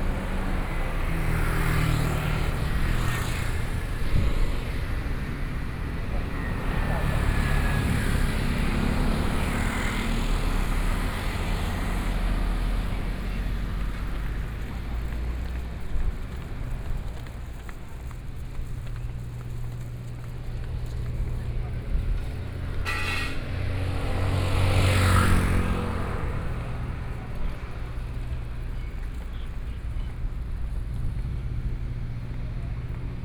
內埤路, Su'ao Township - walking on the Road
walking on the Road, Traffic Sound
Suao Township, 碼頭巷4號, 28 July